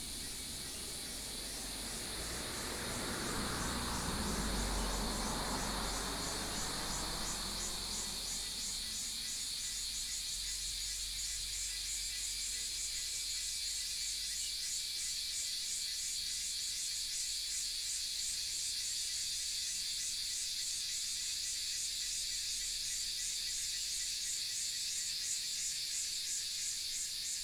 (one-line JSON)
{"title": "種瓜路45-1, 埔里鎮桃米里 - Cicadas cry", "date": "2016-06-07 10:06:00", "description": "Cicadas cry\nBinaural recordings\nSony PCM D100+ Soundman OKM II", "latitude": "23.95", "longitude": "120.91", "altitude": "598", "timezone": "Asia/Taipei"}